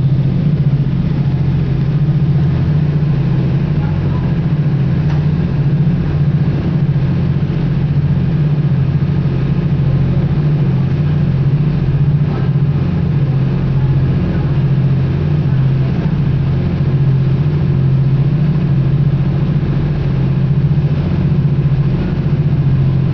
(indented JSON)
{
  "title": "R. Ginjal, Portugal - Barco",
  "date": "2018-04-04 17:32:00",
  "description": "Som do barco de travessia Cacilhas - Cais do Sodré",
  "latitude": "38.69",
  "longitude": "-9.15",
  "altitude": "4",
  "timezone": "Europe/Lisbon"
}